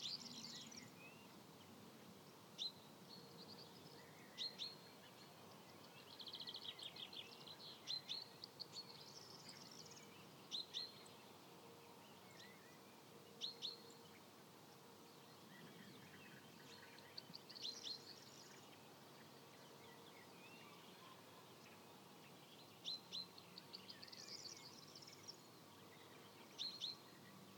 Kobjergvej 7A, 7190 Billund, Denmark, morning
very calm place. actually the sound level was about 32-35 dB in the evening. now it is a little bit noisier, distant traffic and planes from Bilunda airport...
Danmark, April 2022